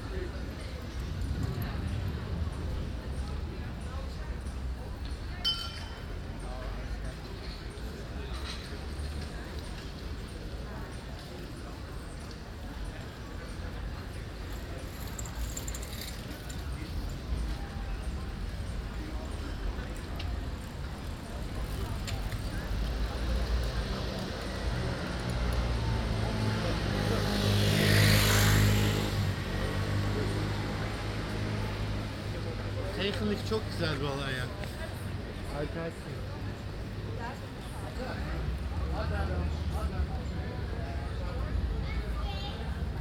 Binaural recording.
A sunny Saturday afternoon around the big church in The Hague. The actual street name is Rond de Grote Kerk which means ‘Around the big church’.
Een zonnige zaterdagsmiddag rond de Grote Kerk in Den Haag. Rond de Grote Kerk is ook daadwerkelijk de straatnaam.